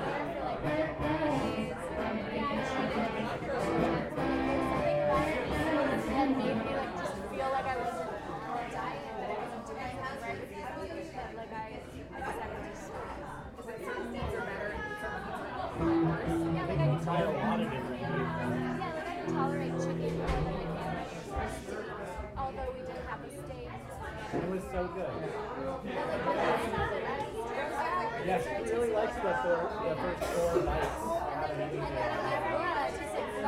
Broadway St., Boulder, CO - Woodbar Speakeasy
This is an upload of the Speakeasy in Boulder Colorado on the Hill